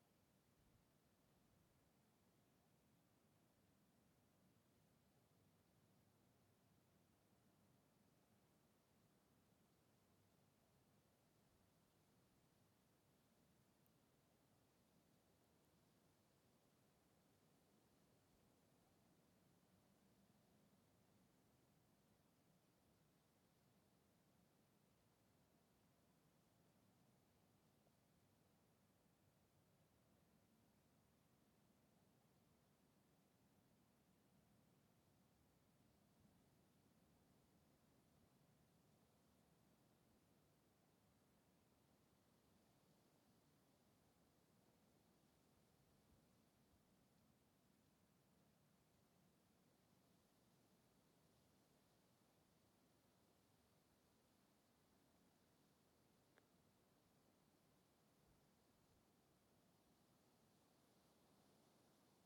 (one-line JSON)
{"title": "Lisburn, Reino Unido - Derriaghy Dawn", "date": "2014-06-22 03:25:00", "description": "Field Recordings taken during the sunrising of June the 22nd on a rural area around Derriaghy, Northern Ireland\nZoom H2n on XY", "latitude": "54.55", "longitude": "-6.04", "altitude": "80", "timezone": "Europe/London"}